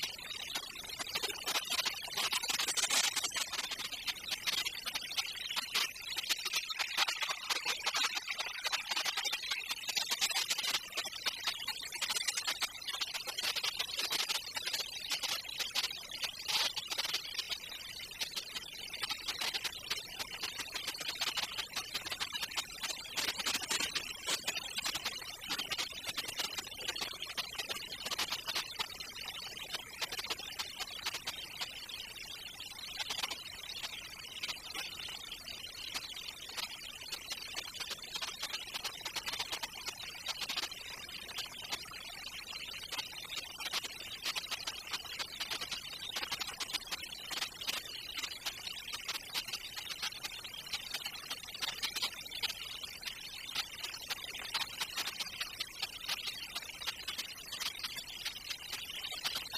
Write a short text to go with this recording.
Workers cut concrete floor into sections.